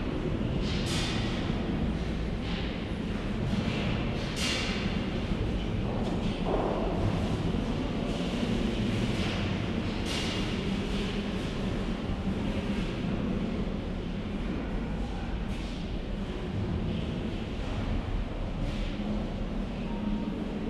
St. Giles' Cathedral, Edinburgh, UK - The Albany Aisle: A chapel for silence a prayer
Recorded with a pair of DPA 4060s and a Marantz PMD661
27 February, ~11:00